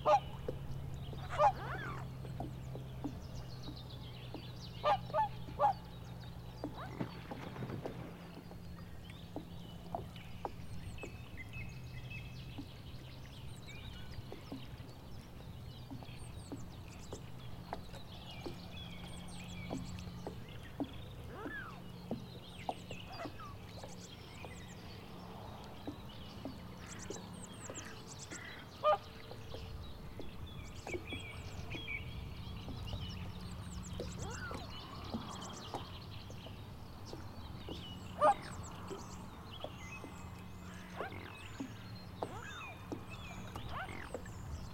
The water beating agants boat. Eistvere, Estonia.
boat, waves, swans